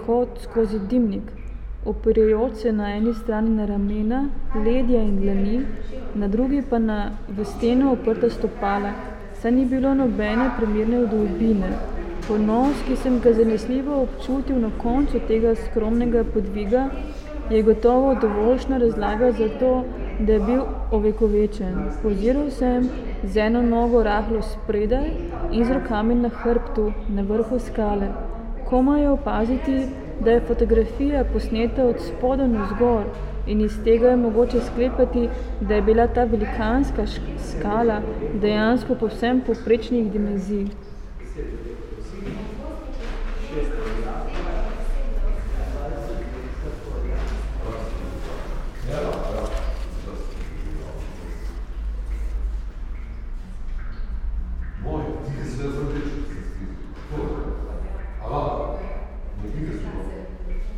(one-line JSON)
{"title": "Secret listening to Eurydice, Celje, Slovenia - Public reading 7 in Likovni salon Celje", "date": "2012-12-20 19:20:00", "description": "time fragment from 46m13s till 51m15s of one hour performance Secret listening to Eurydice 7 and Public reading, on the occasion of exhibition opening of artist Andreja Džakušič", "latitude": "46.23", "longitude": "15.26", "altitude": "241", "timezone": "Europe/Ljubljana"}